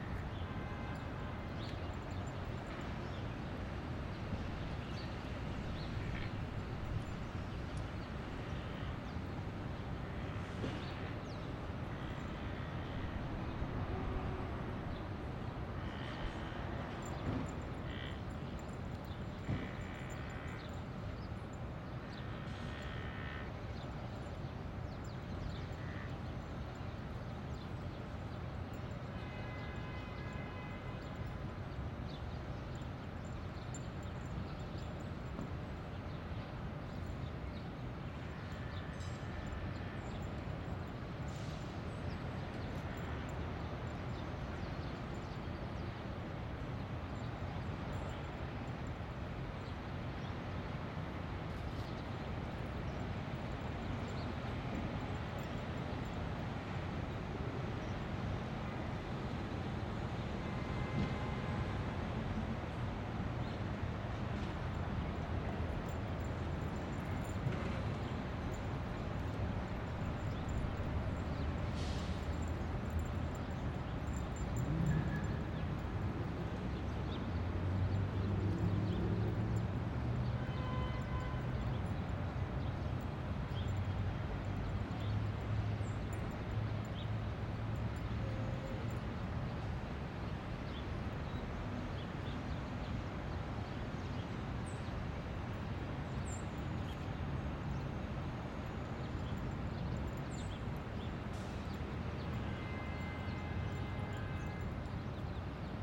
Nathan D Perlman Pl, New York, NY, USA - Stuyvesant Square Park
Calm Thursday morning on Stuyvesant Square Park.
United States, 17 February 2022